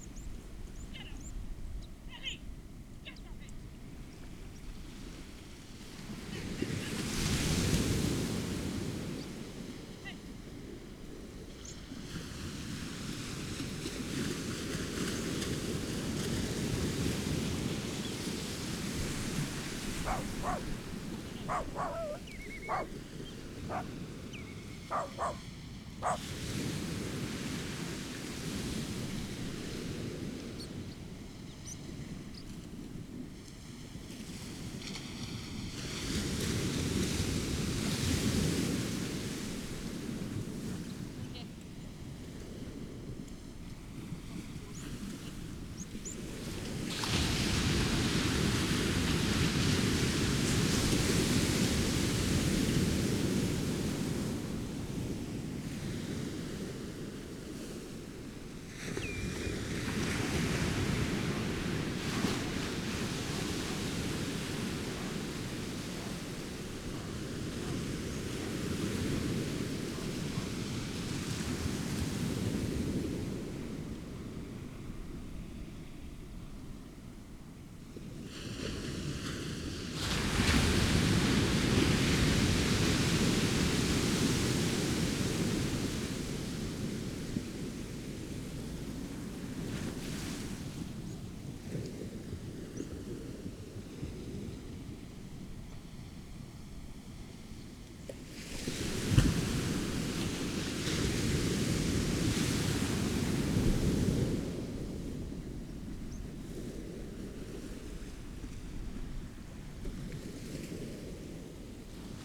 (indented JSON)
{
  "title": "Amble By the Sea, UK - Almost high tide ...",
  "date": "2016-11-16 15:30:00",
  "description": "Amble ... tide coming in ... early on a lady berates her dog for rolling on a dead seal ... waves hitting banks of sea weed ... bird calls from rock pipit ... black-headed gull ... starling ... redshank ... turnstone ... lavalier mics clipped to T bar on mini tripod ...",
  "latitude": "55.33",
  "longitude": "-1.56",
  "altitude": "1",
  "timezone": "Europe/London"
}